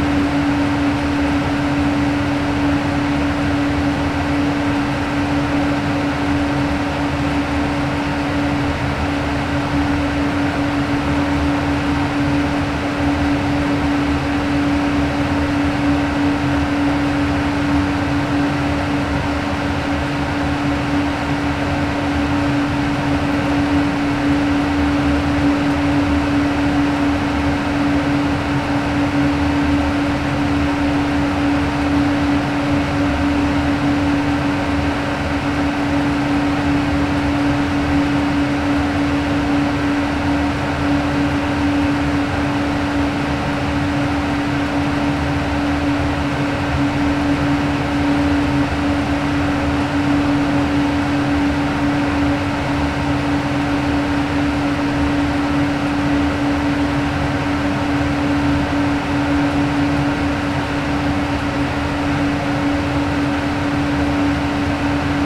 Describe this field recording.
Fête des Morts, Cimetière du Père Lachaise - Paris, Ventilation sous terre